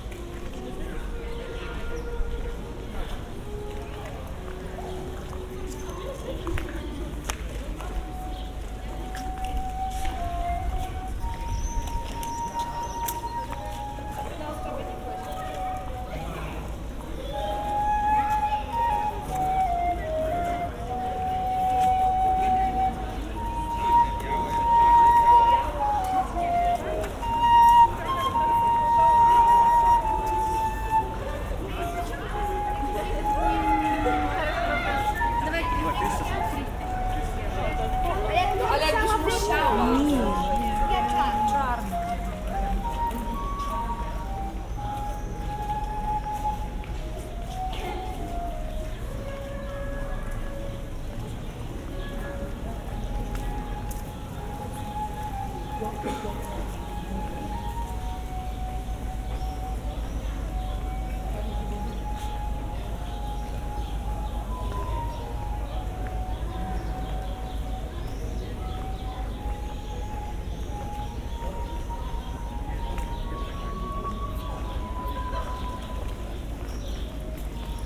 Ostrów Tumski, Wrocław, Poland - (827 BI) Flute, bells, swifts
Recording of walkthrough Ostrów Tumski with a flute player, swarming swifts and some bells.
Recorded supposedly on the Sennheiser Ambeo headset on an iPhone.
województwo dolnośląskie, Polska, 10 July 2021